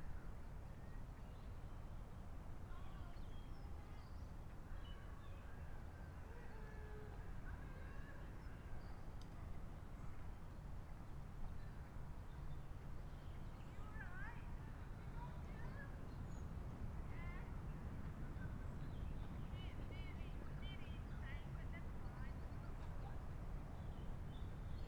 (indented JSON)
{
  "title": "Unnamed Road, Malton, UK - NHS#clapforcarers ...",
  "date": "2020-04-02 19:54:00",
  "description": "NHS#clapforcarers ... people were asked to come out and clap for all the workers ... carers ... all those of the NHS ... at 20:00 ... a number of our small community came out to support ... SASS on tripod to Zoom H5 ...",
  "latitude": "54.12",
  "longitude": "-0.54",
  "altitude": "76",
  "timezone": "Europe/London"
}